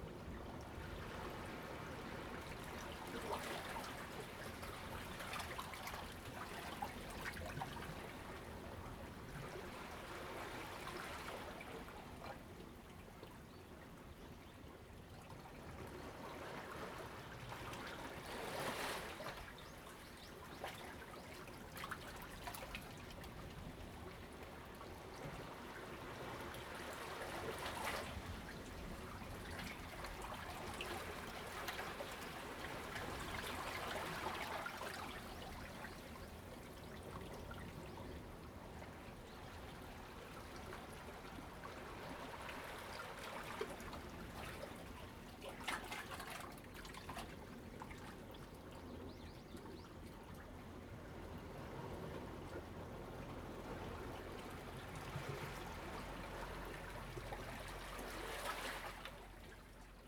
Imowzod, Ponso no Tao - Sound tide
Hiding in the rock cave, Sound of the tide
Zoom H2n MS +XY
Lanyu Township, Taitung County, Taiwan, 29 October 2014